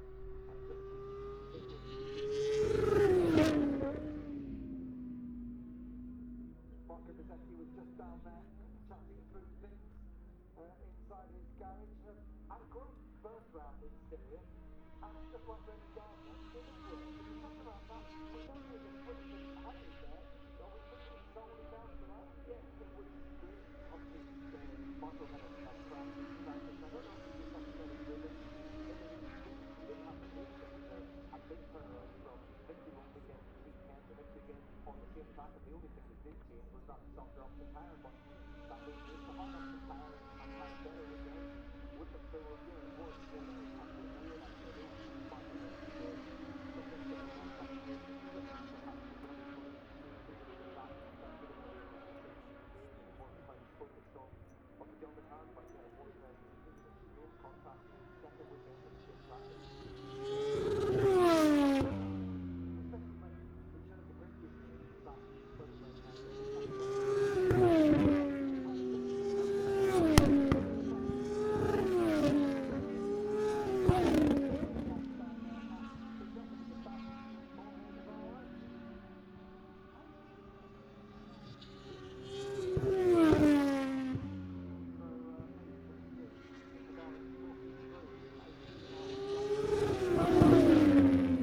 Silverstone Circuit, Towcester, UK - british motorcycle grand prix 2021 ... moto two ...
moto two free practice one ... maggotts ... olympus ls 14 integral mics ...